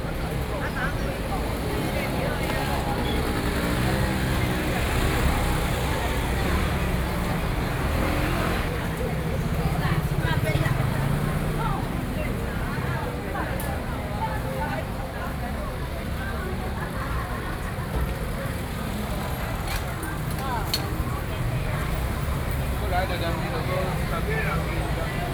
Desheng St., Luzhou Dist. - Traditional markets
New Taipei City, Taiwan